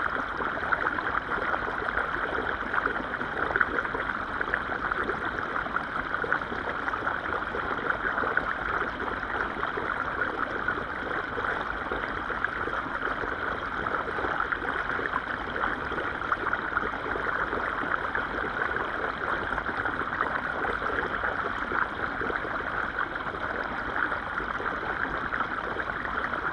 Utena, Lithuania, underwater at sinking pipe
hydrophones at the sinking pipe of the fountain
30 June 2018